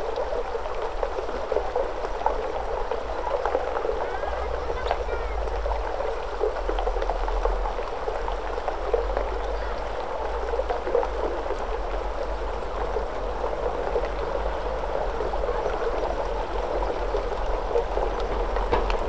Nerja, Spain: rocks in river
Early spring mountain water rolling small rocks around Nerja, Spain; recorded under bridge, about one minute in vehicle loaded with heavy bass beat passes over...